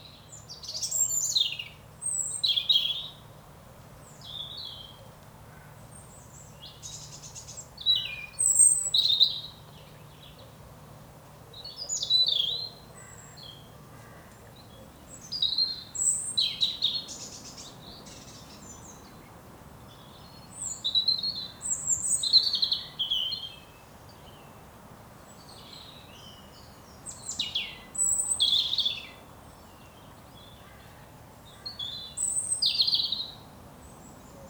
Aubevoye, France - Blackbird
A blackbird is singing in the woods, near the Seine river bank.